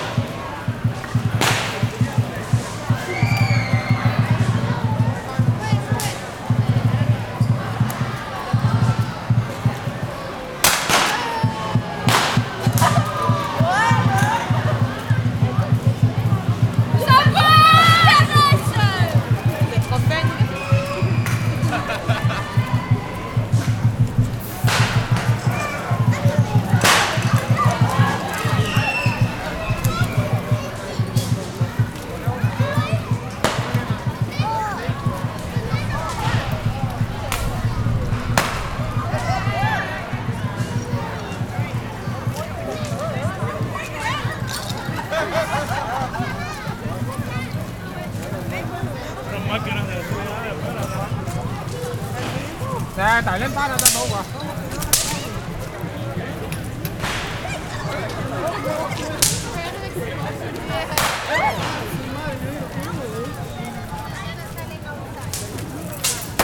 Mott St, New York, NY, USA - Chinese drums and snaps fireworks, Chinatown NY
Lunar New Year Festivities in Chinatown, NY.
Sounds of drums and snaps fireworks
Mott Street, Chinatown.
Zoom H6
16 February, 16:30